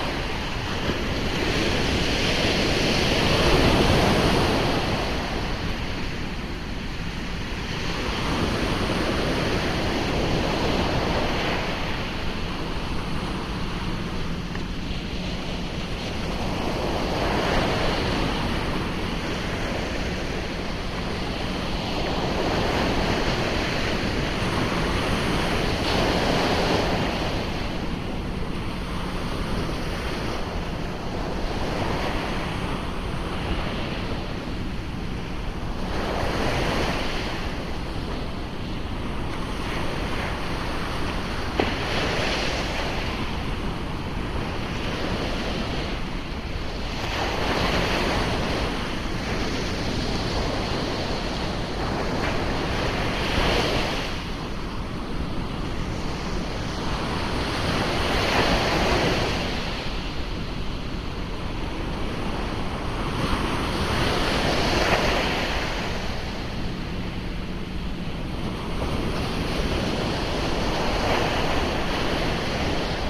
Dunkerque Braek beach surf - DK Braek beach surf
Dunkerque, surf at the beach of the Digue du Braek. Binaural. Zoom H2, Ohrwurm binaural mics.